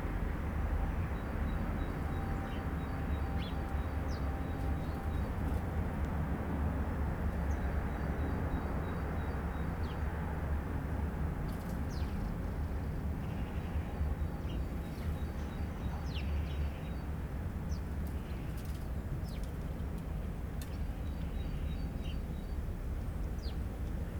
Rue Vincent Scotto, Nantes, France - Noisy afternoon in Nantes Nord
Multi-layered noise: birds, dogs, tramway, heavy (distant) construction work, neighbour machine, passing plane
Plusieurs couches de bruit: oiseaux, chiens, tramway, bruit de travaux (puissant mais lointain), bruit de machine du voisinage, passage d’un avion